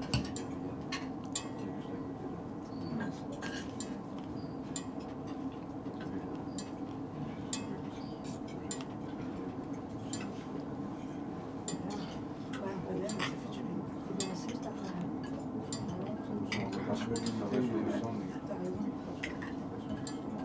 Centre Ville, Aix-en-Provence, Fr. - lunch murmur
murmur during lunch in a tent, rattling of a mobile heating
(Sony PCM D50)